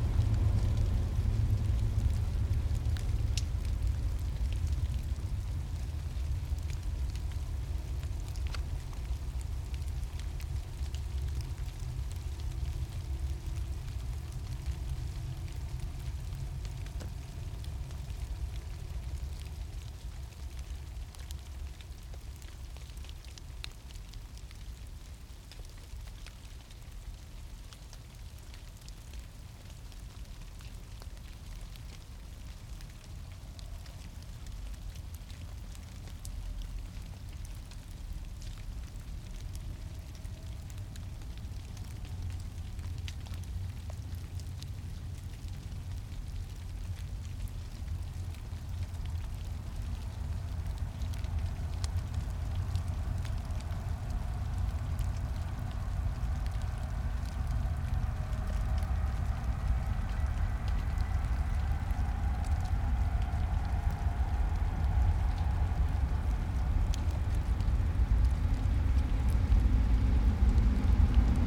Das Nasse Dreieck (The Wet Triangle), wildlife and the distant city in a secluded green space, once part of the Berlin Wall, Berlin, Germany - Long and heavy freight train
Followed by normal SBahn passenger trains.
Deutschland, 18 March 2021